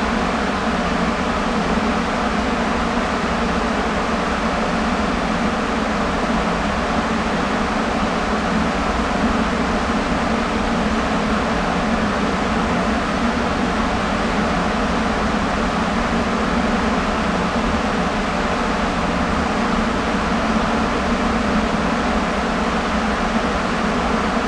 Unnamed Road, Malton, UK - corn dryer ...
corn dryer ... 30 year old machine ... SASS on tripod ...
England, UK, 20 August 2019